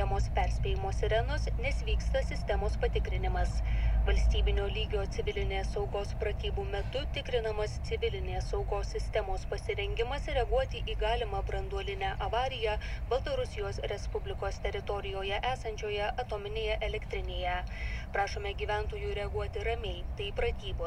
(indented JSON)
{
  "title": "Utena, Lithuania, emergency alarm system test",
  "date": "2021-05-12 15:00:00",
  "description": "Sirens in the city. Civil protection/emergency alarm system test. I went closer to sound source (siren) with my son We took a FM radio and recorded all alarms and radio warnings. This was some kind of alarm training for possible nuclear incident in Belorusian power plant.",
  "latitude": "55.50",
  "longitude": "25.64",
  "altitude": "131",
  "timezone": "Europe/Vilnius"
}